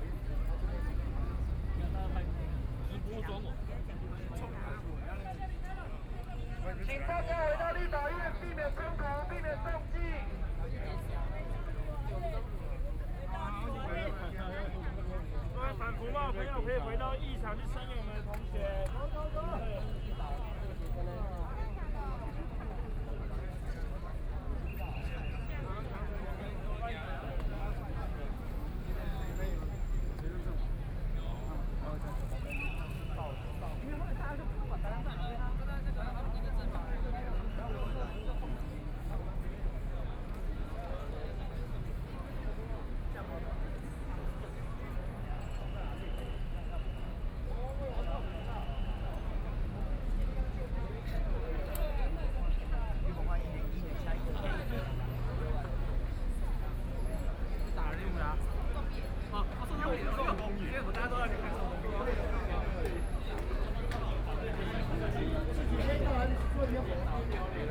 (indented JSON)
{
  "title": "中正區幸福里, Taipei City - protest",
  "date": "2014-04-01 17:25:00",
  "description": "Underworld gang leaders led a group of people, In a rude language against the people involved in the student movement of students",
  "latitude": "25.04",
  "longitude": "121.52",
  "altitude": "11",
  "timezone": "Asia/Taipei"
}